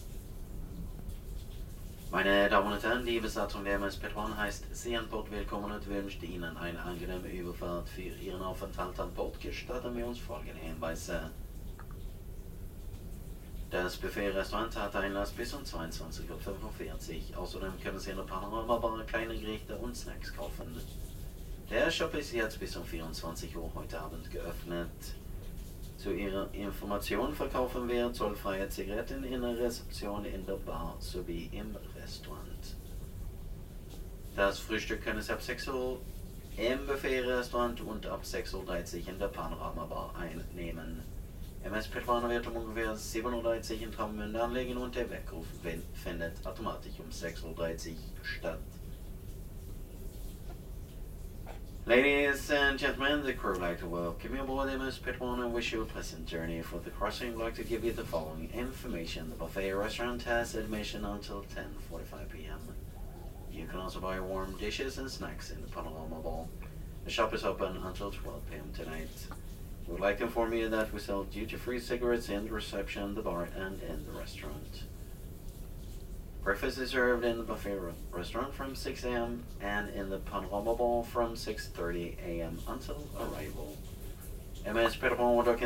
recorded on night ferry trelleborg - travemuende, august 10 to 11, 2008.